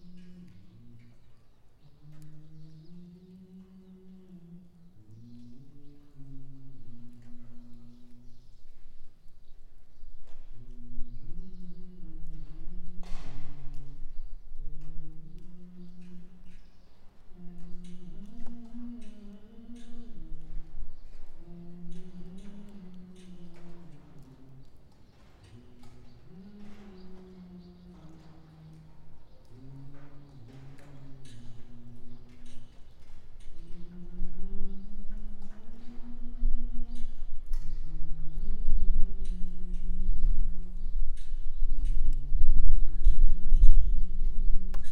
Buzludzha, Bulgaria, inside hall - Buzludzha, Bulgaria, large hall 5 humming
Stephan A. Shtereff is humming some tunes worker's songs, next to the wall, the microphones again on the other side of the hall, the acoustics is still working very well...
Стара Загора, Бългaрия, July 2019